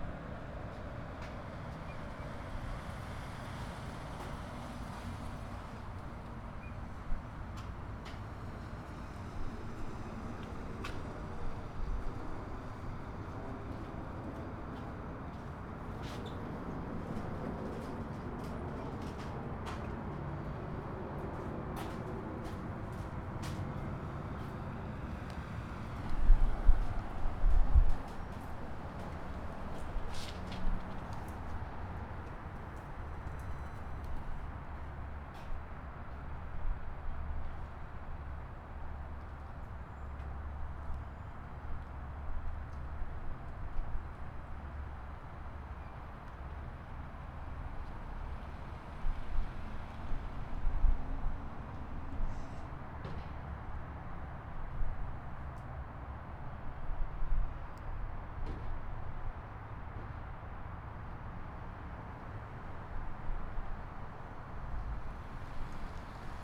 Walt Whitman Avenue, Mount Laurel, NJ, USA - Outside of the Mount Laurel Library
This recording was taken outside at the entrance of the Mount Laurel Library during the middle of the day.